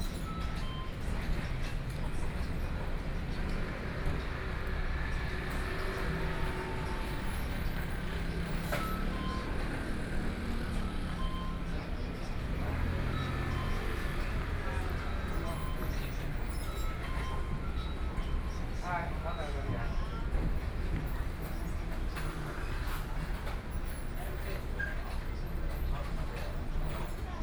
Miaoli Station, Taiwan - Bus Terminal
Standing next to a convenience store, Binaural recordings, Zoom H4n+ Soundman OKM II
Miaoli City, Miaoli County, Taiwan, October 2013